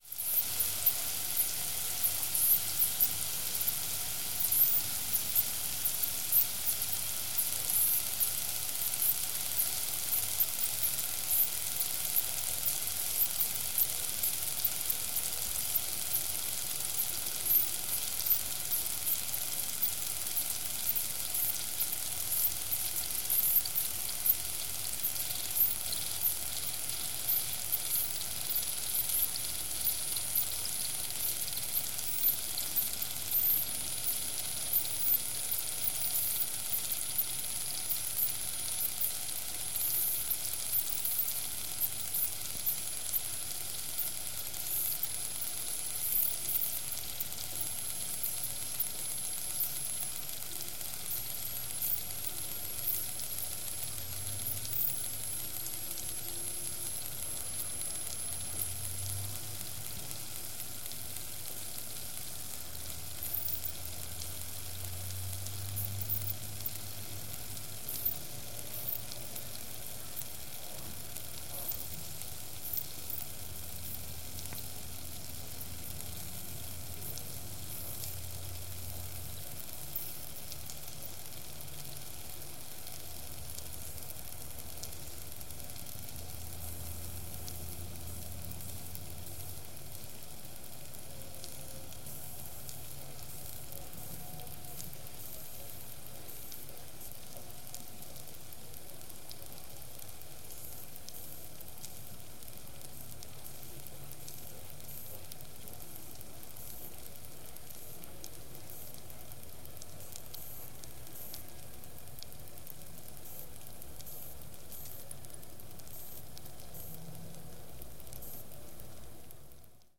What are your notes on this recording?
sound of roasted beetroots taken out of the oven